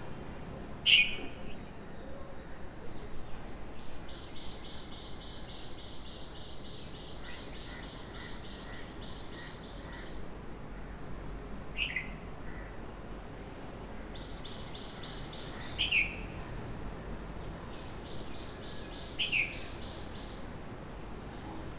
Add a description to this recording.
Soundscape from my balcony in Colombo on the morning of the Sinhala-Tamil New Year. The usual suspects are there, a srilankan broom brushing the leaves away, the crows, the chipmunks and various other birds that I can't name including a very close visitor towards the end of the track. Its much quieter than usual because its a holiday and its the only day of the year that I haven't seen any buses (the noisiest most dangerous things around) on the road. You can even hear the waves of the sea if you listen carefully.